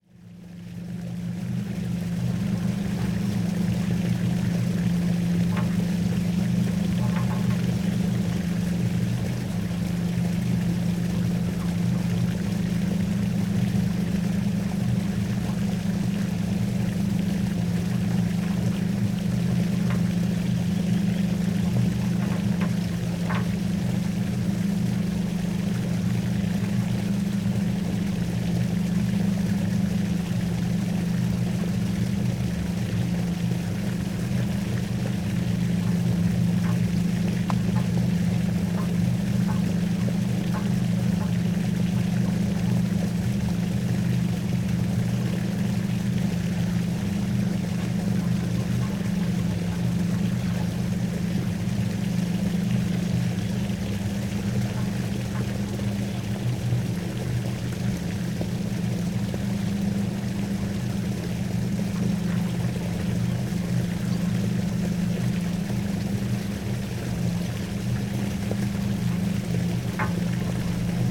ERM fieldwork -Ohakvere settebassein 1
pumped mine water drains emptying into the basin
Ida-Virumaa, Estonia, 5 July 2010, 12:32